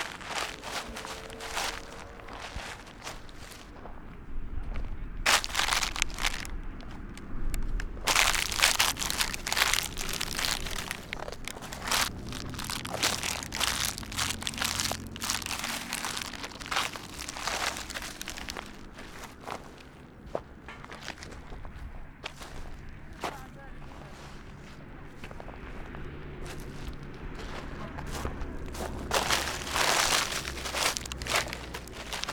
Nablus dump, walking through plastic material
project trans4m orchestra
אזור יהודה והשומרון, 1 August 2010